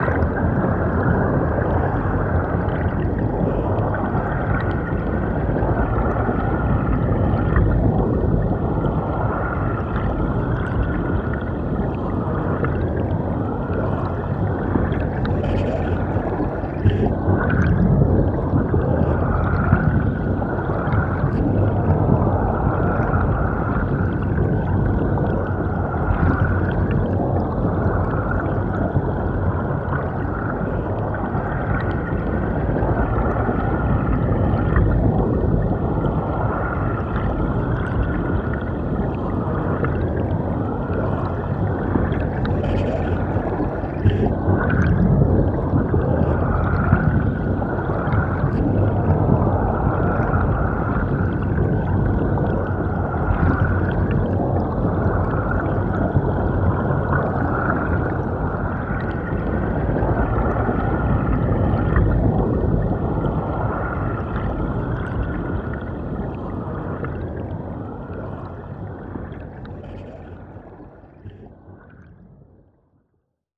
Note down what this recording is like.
Recorded in stereo with two hydrophones and an H4n recorder.